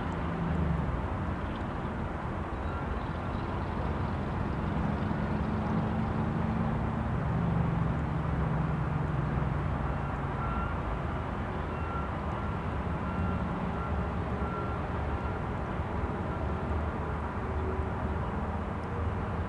Urban noise across the river, Calgary
recorded during a 10 minute listening exercise to analyze the soundscape
21 April, 21:15